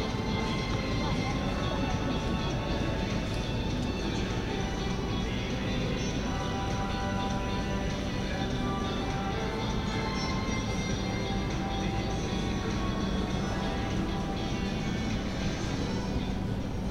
minsk, october square, underground lifelines - minsk, october square, metro